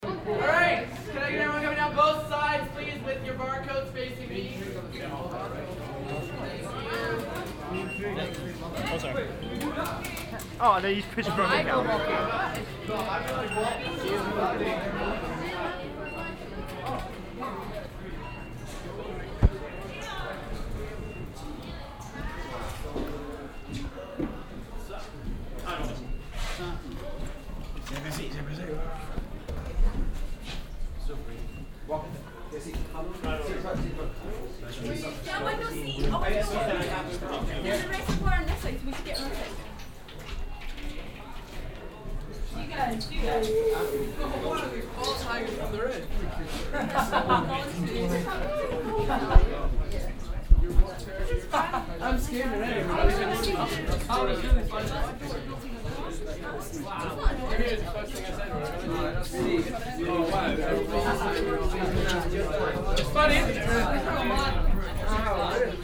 vancouver, grouse mountain, skyride station
at grouse mountain ground station - people entering the cabin, talking youth group
soundmap international
social ambiences/ listen to the people - in & outdoor nearfield recordings